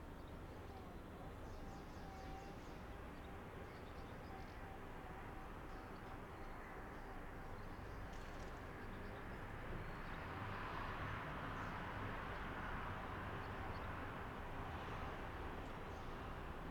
Krnjevo, Rijeka, soundscape
Field recording, soundscape, 8th floor of building.
rec. setup: M/S matrix-AKG mics in Zeppelin>Sound Devices mixer. 88200KHz